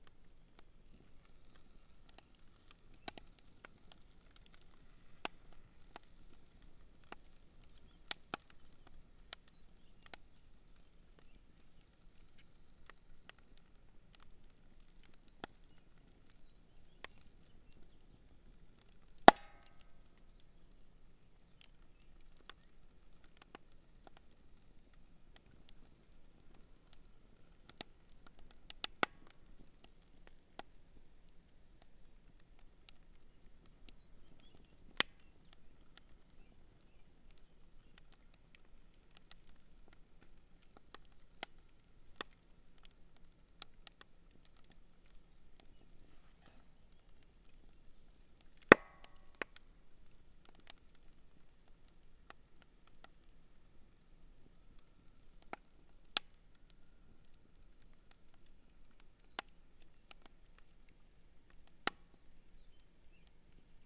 Buryanek State Recreation Area - Camp Fire
Recording of a camp fire at the campground in the Burynanek State Recreation area. A log is added to the fire about half way through the recording
South Dakota, United States